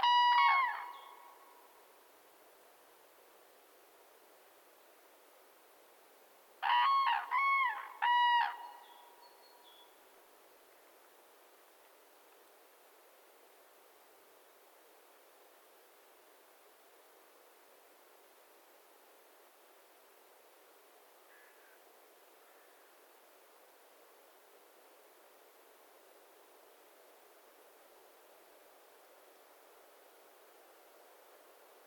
{
  "title": "Sirutėnai, Lithuania, two cranes",
  "date": "2022-03-14 16:45:00",
  "description": "A pair of cranes (Grus grus) welcoming fellow fieldrecordist...",
  "latitude": "55.55",
  "longitude": "25.59",
  "altitude": "111",
  "timezone": "Europe/Vilnius"
}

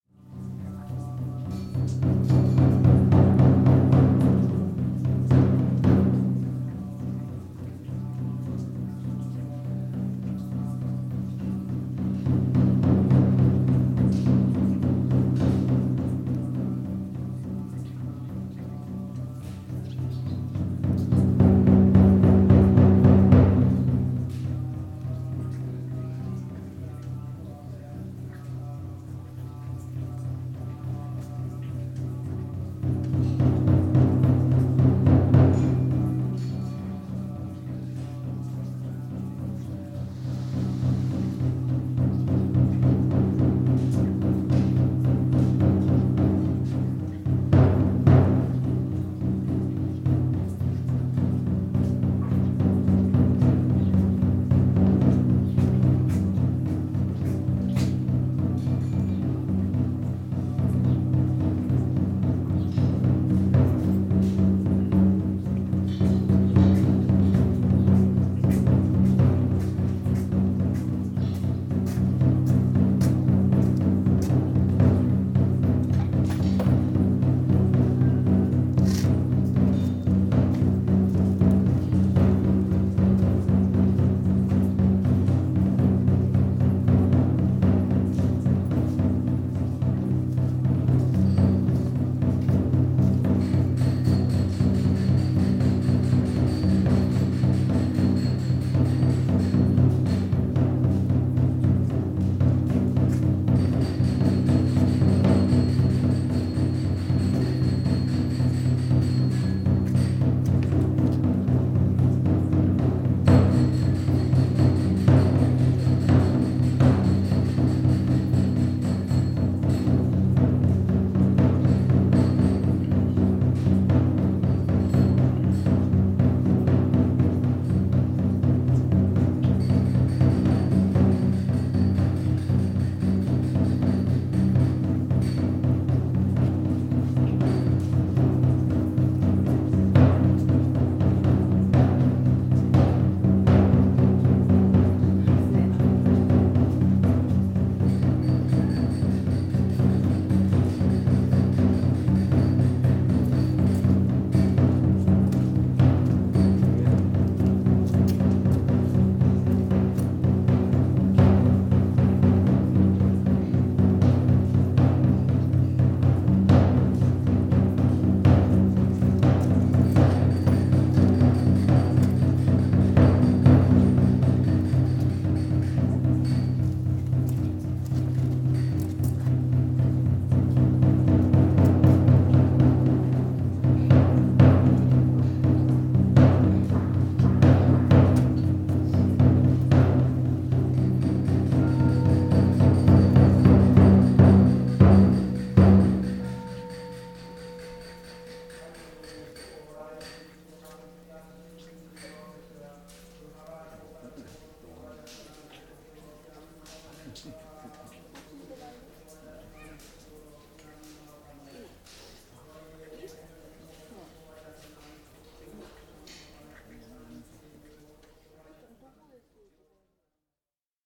{
  "title": "Zenkō-ji Temple, Motoyoshichō Nagano, Nagano-shi, Nagano-ken, Japan - Drumming and chanting in one of the buildings of the Zenkō-ji Temple",
  "date": "2017-02-13 13:10:00",
  "description": "As I was walking through the Temple complex, away from the amazing bell, I heard this drumming and chanting emanating from a building that sits to the left of the main building. I stood a ways outside so as not to disturb the proceedings and listened from outside. The sounds from within the Temple meld and combine with the sounds of the outdoors... passersby walking past and snow melting off the rooftops of the buildings.",
  "latitude": "36.66",
  "longitude": "138.19",
  "altitude": "410",
  "timezone": "Asia/Tokyo"
}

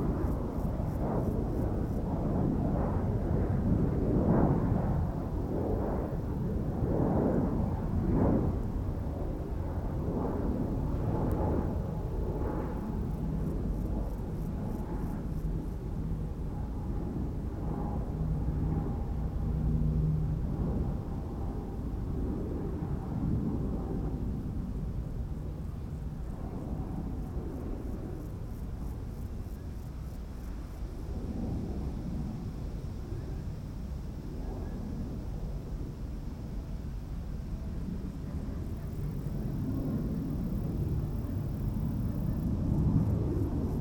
{
  "date": "2016-07-26 16:57:00",
  "description": "Air traffic noise on a windy summers afternoon in Brockwell Park in Brixton, London.",
  "latitude": "51.45",
  "longitude": "-0.11",
  "altitude": "40",
  "timezone": "Europe/London"
}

